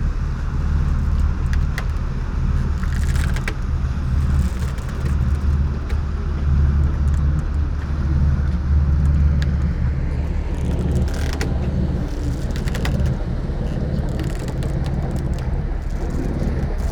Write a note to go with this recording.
marina ambience, quiet afternoon, soft waves, wooden sailing boat, voices ...